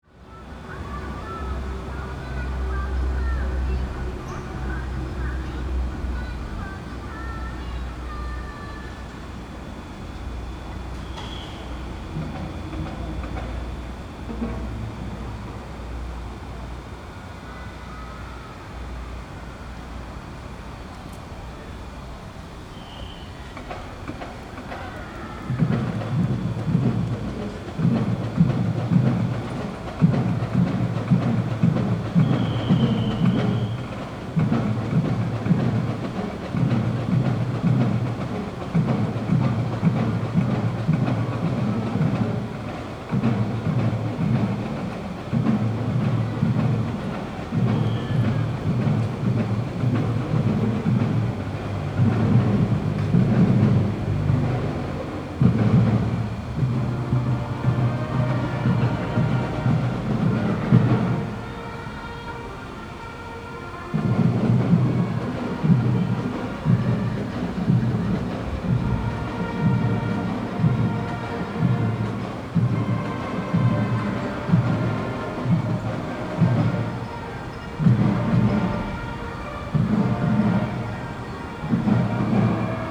Nengren St., Xindian Dist., New Taipei City - Pipe Band
Pipe Band, High School pipe band practice
Zoom H4n + Rode NT4